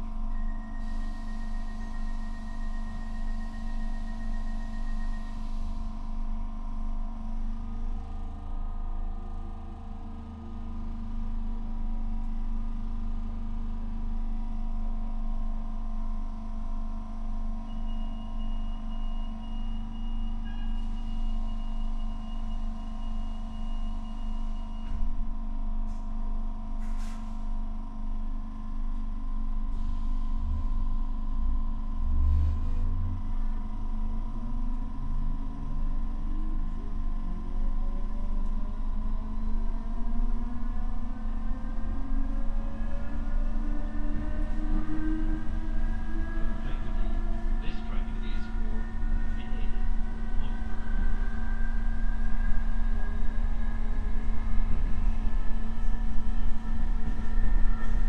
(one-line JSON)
{"title": "Brookwood Rise, Northside, Dublin, Irlande - in the DART going to Howth", "date": "2019-06-21 15:19:00", "description": "Field recording in the DART, Stop Stations, Going to Howth\nRecording Gear : Primo EM172 omni (AB) + Mixpre-6\nHeadphones required", "latitude": "53.38", "longitude": "-6.19", "altitude": "26", "timezone": "Europe/Dublin"}